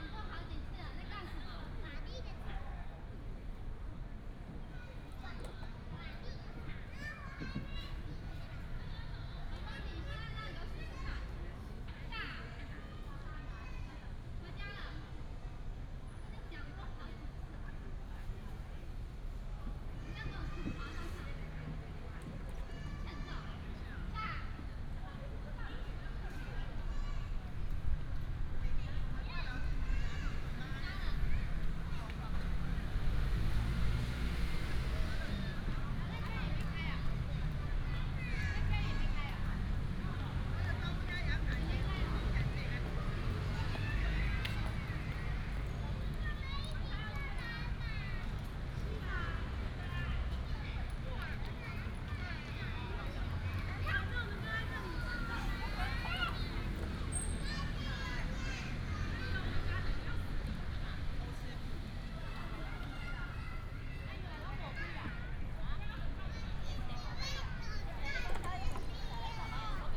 in the Park, Traffic sound, sound of birds, Children's play area
Datong District, Taipei City, Taiwan, 9 April 2017